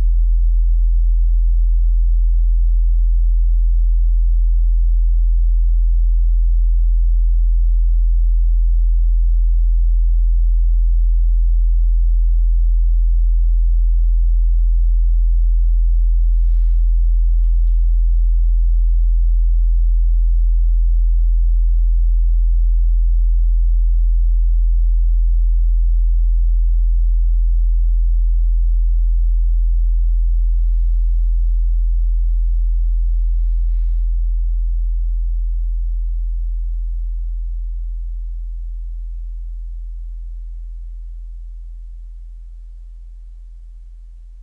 second recording of the subsonic sound of the sound and light installation by finnbogi petursson